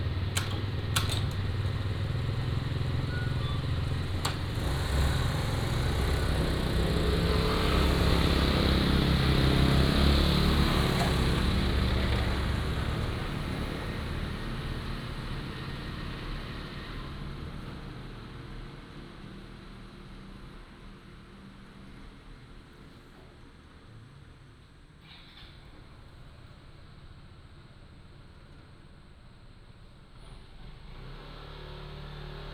南寮村, Lüdao Township - Morning streets
Morning streets, In front of the convenience store